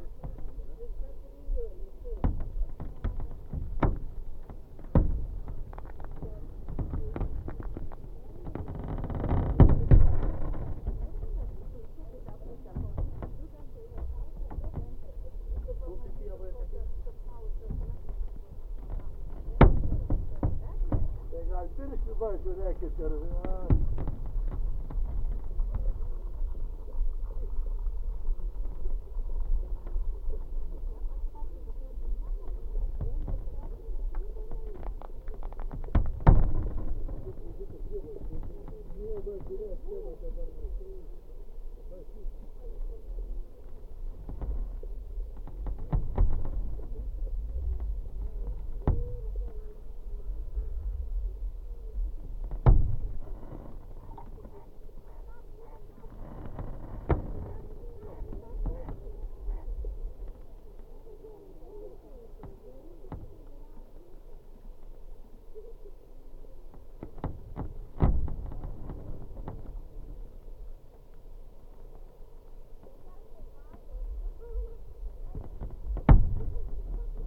Utena, Lithuania, on tiny ice
LOM geophone on the very tiny ice at the park riverside. the ice work as membrane so you can hear speaking passengers...
Utenos apskritis, Lietuva, February 2020